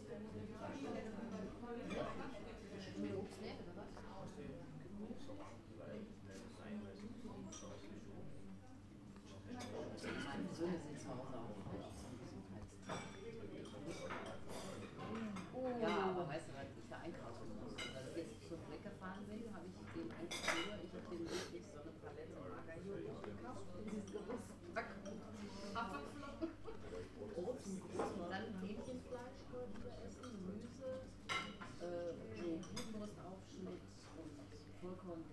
Frühstücks-Speisesaal des Kolping-Hauses der Donau- Universität, ein Stück für Perkussion & Stimmen
Donau-Universität Krems, Krems an der Donau, Austria, 27 January 2013, ~08:00